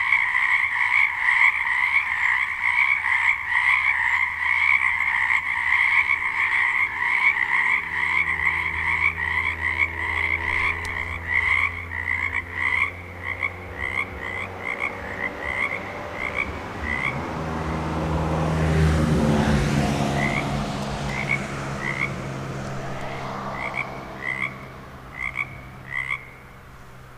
Duncan, B.C. - Frogs/Toads
After dark these frogs (or toads?) come out and call for hours. Hear what they do when a car passes by about halfway through the clip.